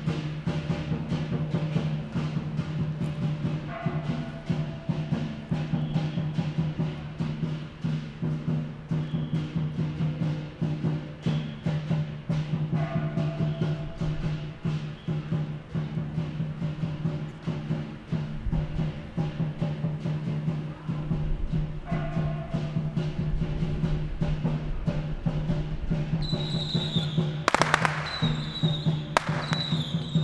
芳苑鄉芳苑村, Changhua County - Next to the temple
Next to the temple, Firecrackers, Traditional temple festivals
Zoom H6 MS